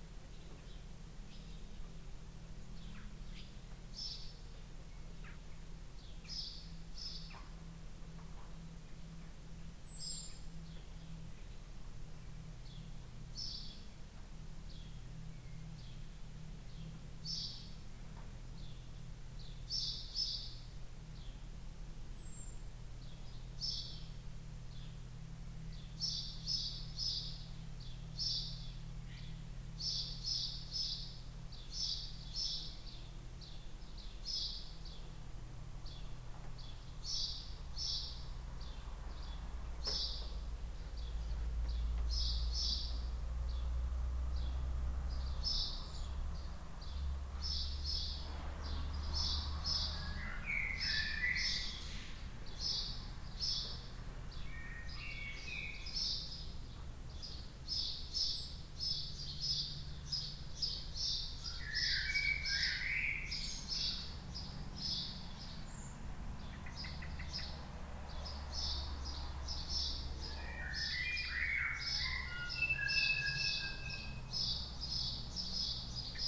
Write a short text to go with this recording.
There was a huge barn on the campsite where we were staying, and it was full of birds including swallows and swifts and blackbirds and wood pigeons (I think). The big resonant barn amplified their songs in such a lovely way that I wanted to document it. EDIROL R-09 left on top of an old boiler for 35 minutes - this is an excerpt of a much longer recording.